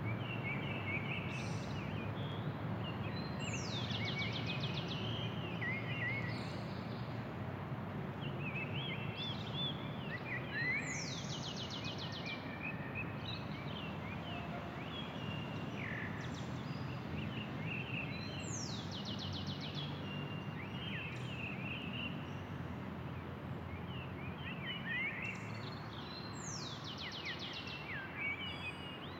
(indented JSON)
{
  "title": "Cra., Bogotá, Colombia - Atmosphere Residential Complex Park Mallorca 2, Modelia, Fontibón",
  "date": "2021-05-18 04:30:00",
  "description": "4:30 a.m. Mono recording. Early morning atmosphere in a residential neighborhood park surrounded by lots of nature, leafy trees and green areas near a main avenue not very crowded at that time. Landscape with diverse bird songs, soft breeze and in the background sound of vehicles passing by (buses, trucks, motorcycles and cars). No sounds of people exercising or voices, no dogs walking.",
  "latitude": "4.67",
  "longitude": "-74.13",
  "altitude": "2549",
  "timezone": "America/Bogota"
}